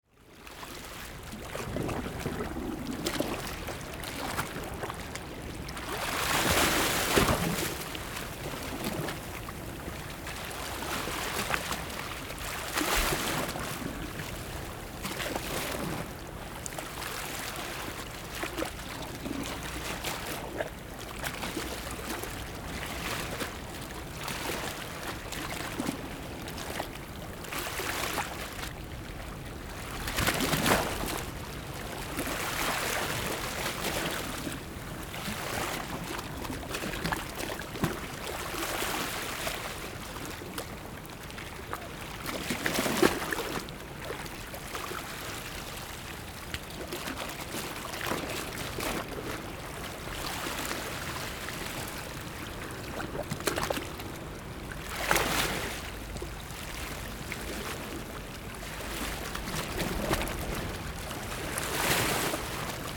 Sound of the waves
Zoom H6 MS mic